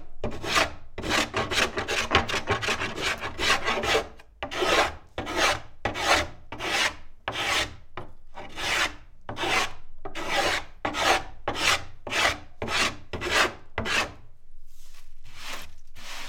Umeå. Violin makers workshop.
Planing, measuring, marking.
Umeå, Sweden